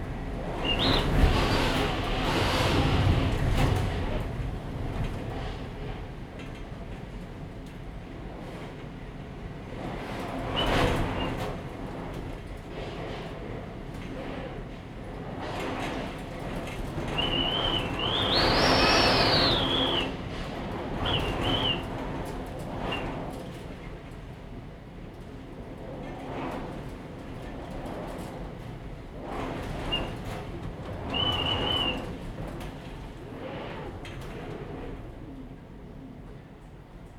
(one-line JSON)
{"title": "大仁街, Tamsui Dist., New Taipei City - Gale", "date": "2015-08-08 16:17:00", "description": "typhoon, Gale\nZoom H2n MS+XY", "latitude": "25.18", "longitude": "121.44", "altitude": "45", "timezone": "Asia/Taipei"}